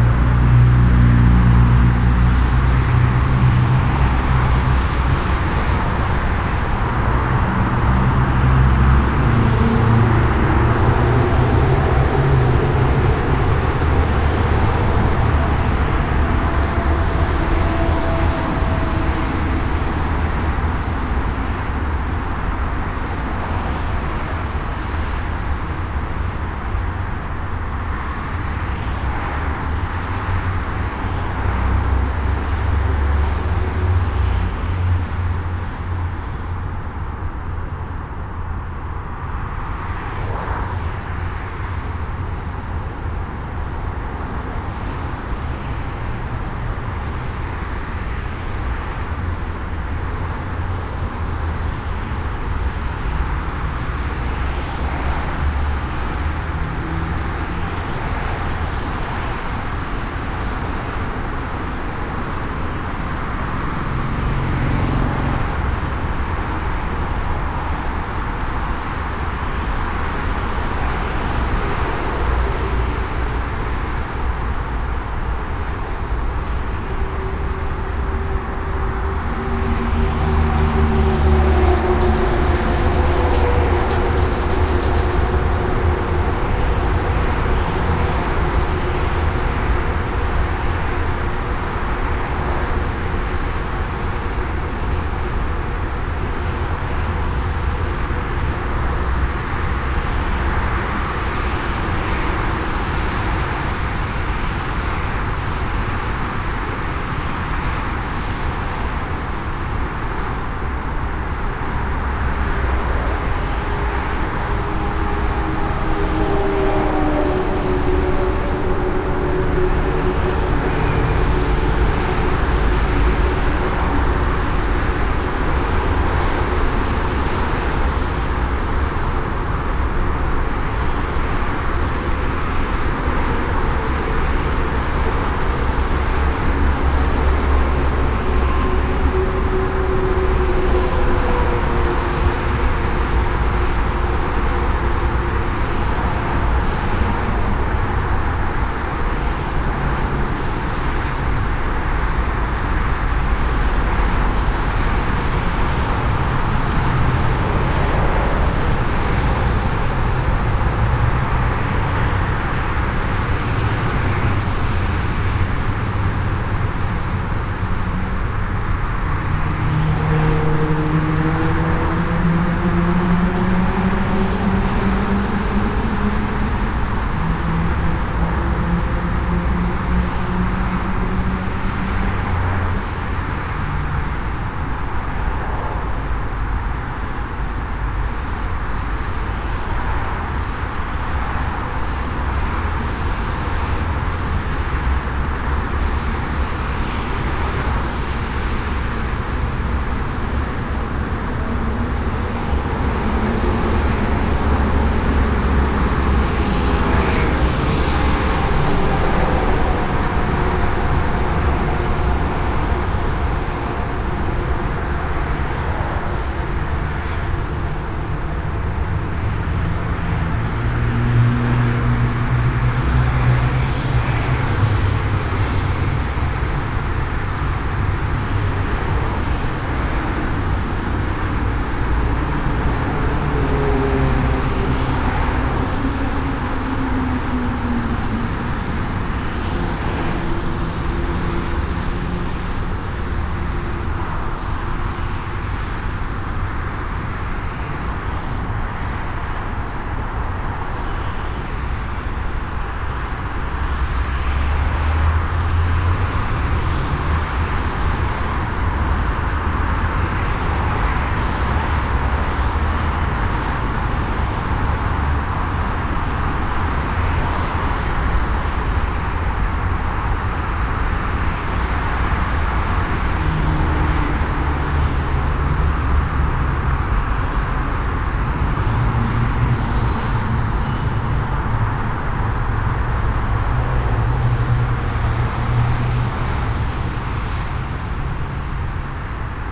essen, city highway
the evening traffic noise of the city highway vanishing in the tube sound of a tunnel.
Projekt - Klangpromenade Essen - topographic field recordings and social ambiences
Essen, Germany, 9 June